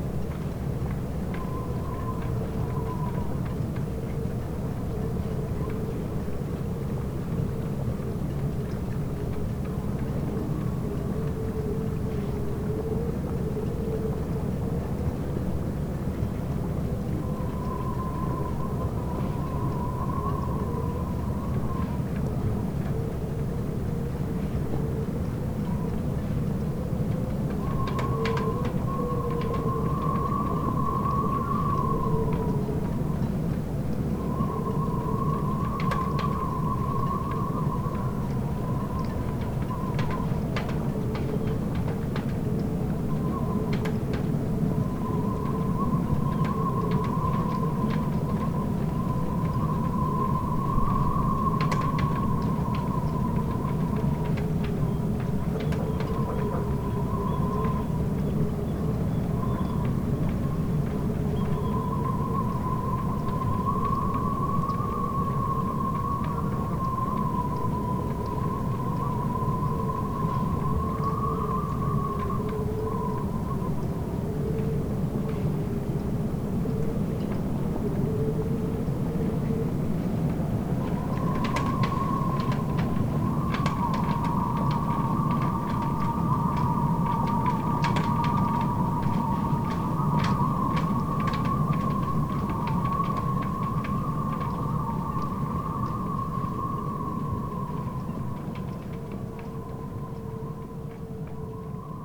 lemmer, vuurtorenweg: marina - the city, the country & me: marina
wind blows through sailboat masts and riggings
the city, the country & me: june 21, 2011